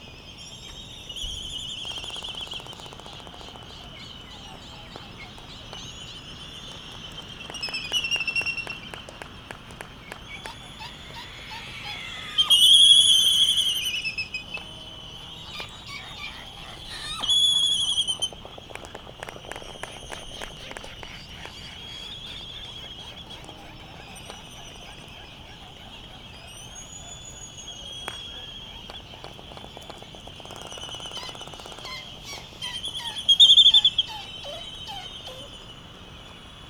United States Minor Outlying Islands - Laysan albatross soundscape ...
Laysan albatross soundscape ... Sand Island ... Midway Atoll ... laysan albatross calls and bill clapperings ... white terns ... canaries ... open lavalier mics either side of a fur covered table tennis bat used as a baffle ... wind thru iron wood trees ... background noise ...